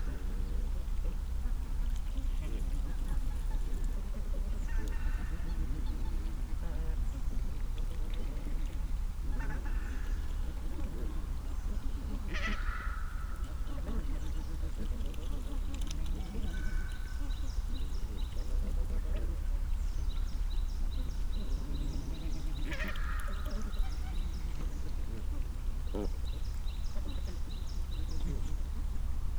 문산_철새_Migratory geese feeding...a large group of these voxish wild migratory geese were feeding liberally among wintry rice fields...they appeared to vocalize while eating with low chesty, throaty sounds...and to socialize using also mighty nasal honks and squawks...increasing human use of this area, such as construction of new houses and businesses in this valley, is apparent...human/wild-life convergence seems evident in this recording...